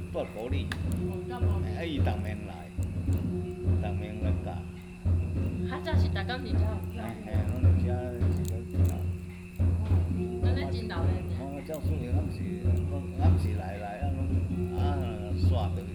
福同宮, 桃米里Puli Township - In the temple
In the temple, Frog sounds
Nantou County, Puli Township, 桃米巷37號, May 2016